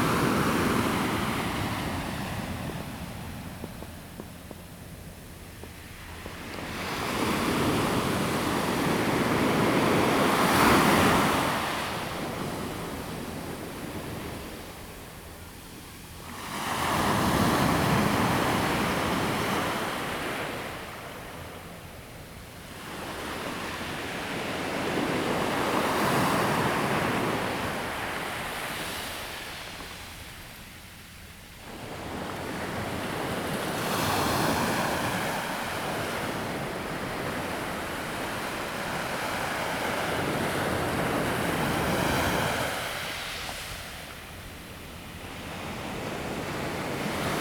sound of the waves
Zoom H2n MS+XY +Sptial Audio